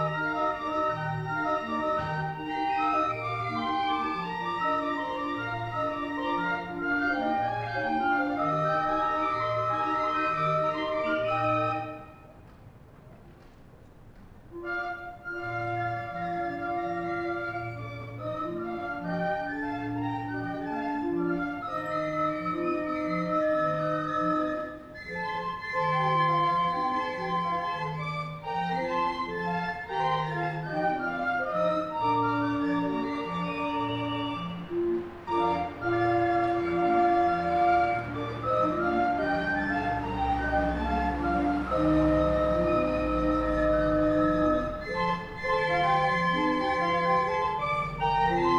musician playing in rue henri regnault orgue de barbarie